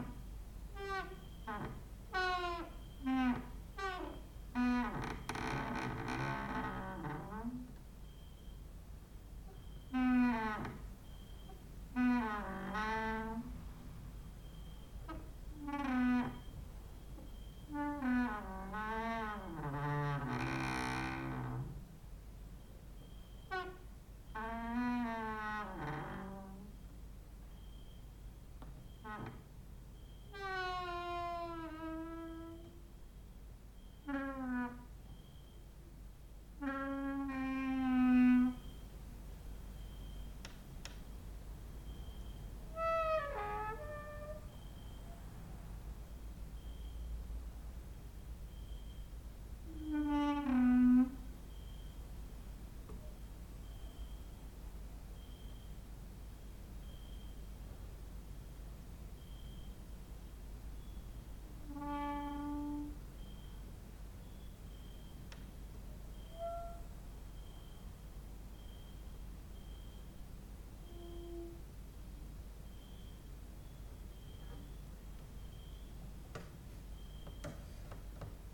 Mladinska, Maribor, Slovenia - late night creaky lullaby for cricket/13
cricket outside, exercising creaking with wooden doors inside